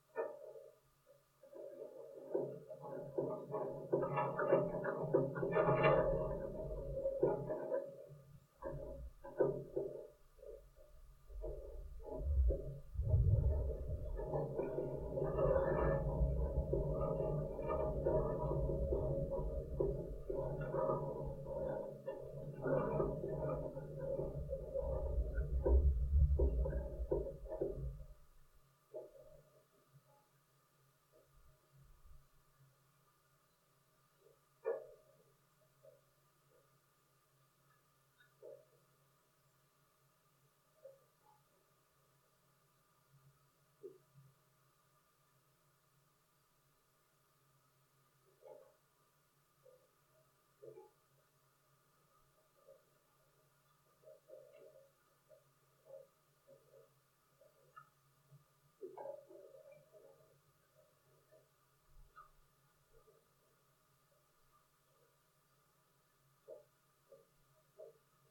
Concrete Structure, Council Bluff Dam, Missouri, USA - Concrete Structure
Concrete structure fitted with metal doors on the non-reservoir side of the dam. Contact mic attached to metal tube on door surrounding padlock.
Missouri, United States of America